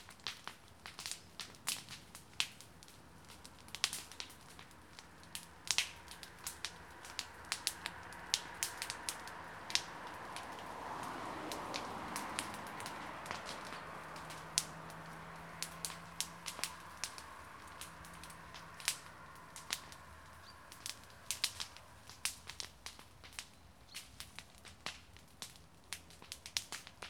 {
  "title": "Rheinfelden, Cranachstrasse - sticks and leaves pop",
  "date": "2014-09-11 11:15:00",
  "description": "man burning a pile of shriveled leaves and dry sticks in a garden.",
  "latitude": "47.57",
  "longitude": "7.78",
  "altitude": "285",
  "timezone": "Europe/Berlin"
}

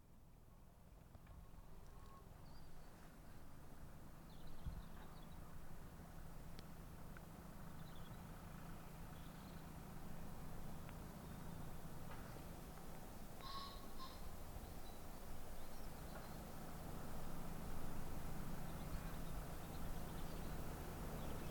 {
  "title": "Langdon Hill, Chideock, Dorset, UK - Golden Cap",
  "date": "2014-03-07 11:30:00",
  "description": "A tractor, a pigeon, a plane.",
  "latitude": "50.73",
  "longitude": "-2.84",
  "timezone": "Europe/London"
}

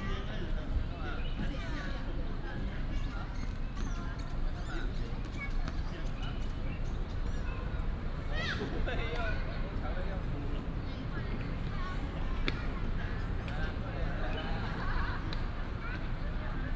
{
  "title": "Gongxue N. Rd., South Dist., Taichung City - Child",
  "date": "2017-04-29 17:12:00",
  "description": "Child, Small park, Traffic sound, sound of the birds",
  "latitude": "24.12",
  "longitude": "120.66",
  "altitude": "52",
  "timezone": "Asia/Taipei"
}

